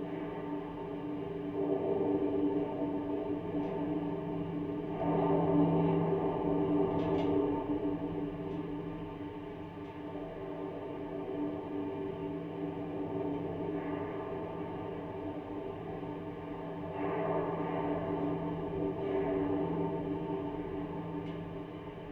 Lithuania, Ginuciai, watchtower
contact microphones on the mobile tower/watchtower
13 October 2013, 14:20